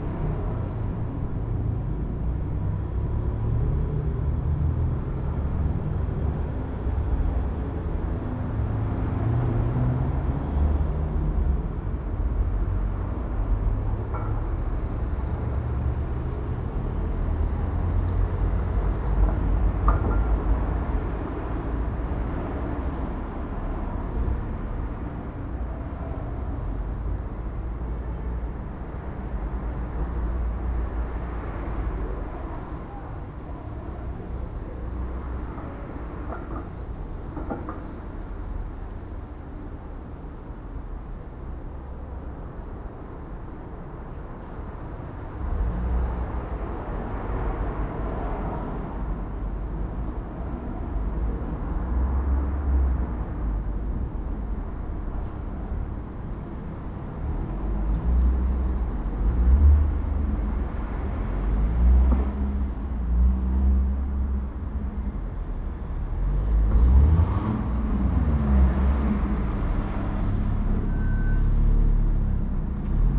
Lithuania, Vilnius, cityscape
a cityscape as heard from the pile of fallen autumn's leaves
Vilnius district municipality, Lithuania